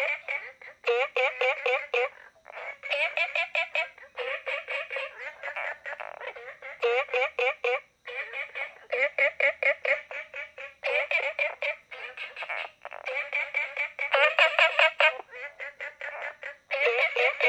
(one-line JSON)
{"title": "綠屋民宿, 桃米里 Taiwan - Frogs chirping", "date": "2015-06-11 22:06:00", "description": "Frogs chirping, Ecological pool\nZoom H2n MS+XY", "latitude": "23.94", "longitude": "120.92", "altitude": "495", "timezone": "Asia/Taipei"}